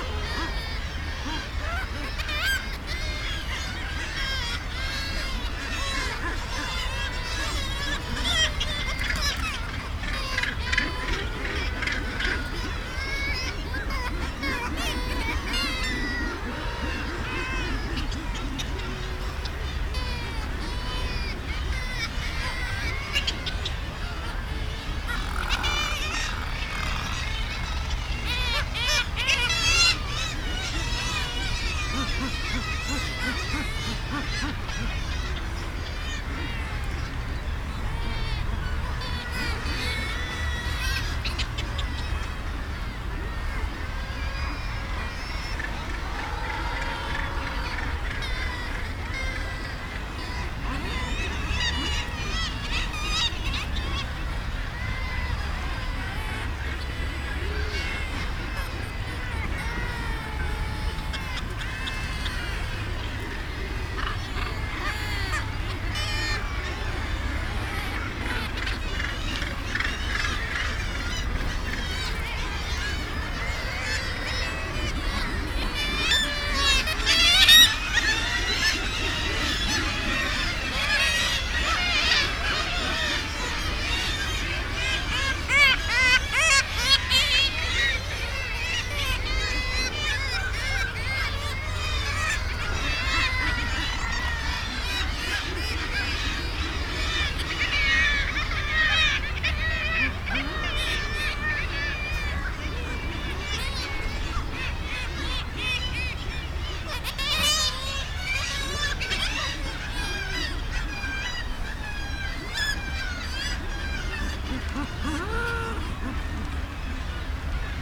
East Riding of Yorkshire, UK - Kittiwakes ... mostly ...
Kittiwakes ... mostly ... kittiwakes calling around their nesting ledges at RSPB Bempton Cliffs ... bird calls from ... guillemot ... razorbill ... gannets ... lavalier mics on a T bar fastened to a fishing net landing pole ... some wind blast and background noise ...